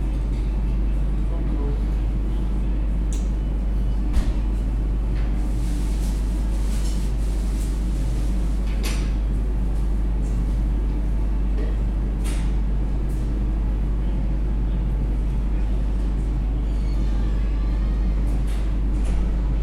Balti Jaama Kohvik, Tallinn, Estonia - Cheburek commons
A genuine blend of pan- and post-Soviet cultures, a low-threshold eatery for all, and a genuine common of sorts, wrapped in the smell and sound of chebureki deep in the frying.